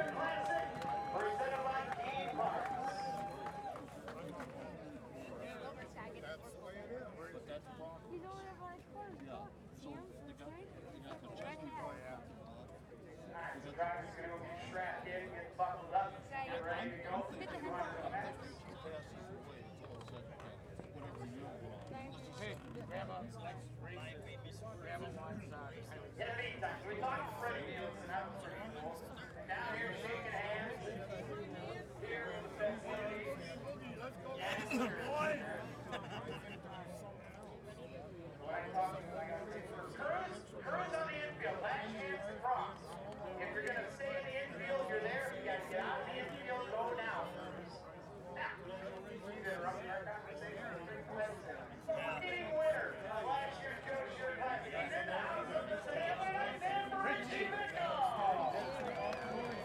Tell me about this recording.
Recorded at the Joe Shear Classic an ARCA Midwest Tour Super Late Model Race at Madison International Speedway. This starts just prior to driver introductions and goes through the driver introductions, the command to start engines, the 200 lap race and the victory lane interview with the winner.